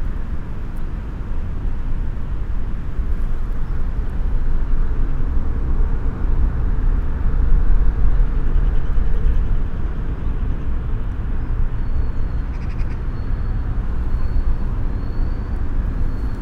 Vilvorde, Belgique - Highway overpass
The famous highway overpass called 'viaduc de Vilvorde' or 'viaduct van vilvoorde'. Recorded below the bridge, it's a very-very-very depressive place, especially by winter.
Belgium, 13 August, 08:20